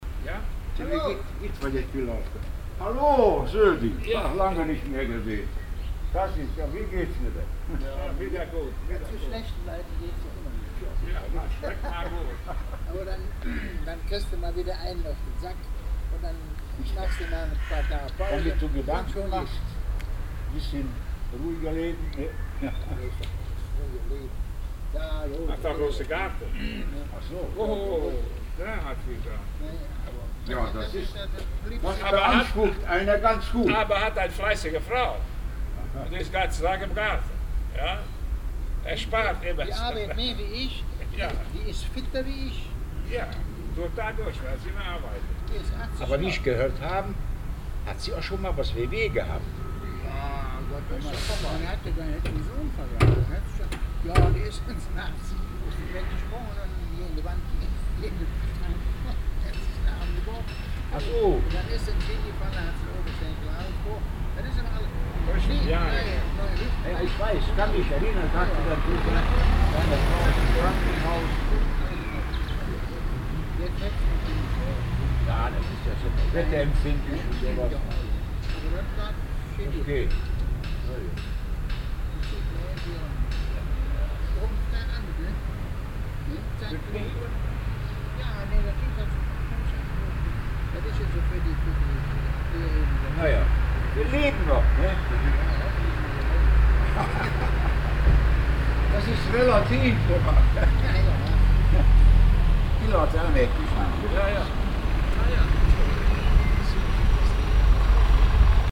cologne, kyllstrasse, autowerkstatt
soundmap nrw/ sound in public spaces - in & outdoor nearfield recordings